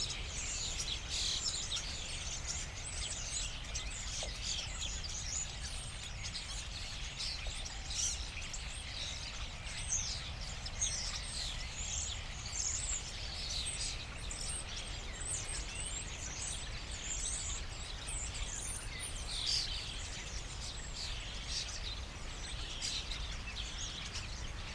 early summer evening, Tempelhofer Feld, ancient airport area, high grass, fresh wind, a flock of starlings gathering in a bush, starting to chat.
(Sony PCM D50, Primo EM172)